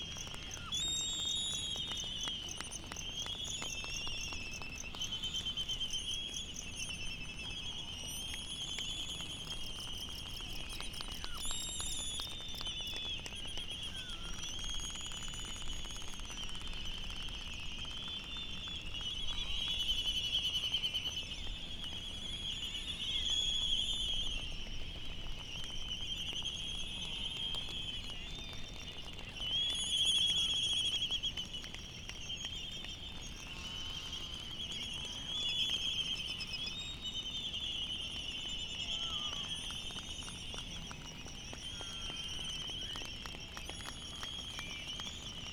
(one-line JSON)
{"title": "United States Minor Outlying Islands - Midway Atoll soundscape ...", "date": "2012-03-15 07:30:00", "description": "Midway Atoll soundscape ... Sand Island ... bird call from Laysan albatross ... white tern ... black noddy ... distant black-footed albatross and a cricket ... open lavaliers on mini tripod ... background noise and some wind blast ... one or two bonin petrels still leaving ...", "latitude": "28.22", "longitude": "-177.38", "altitude": "9", "timezone": "GMT+1"}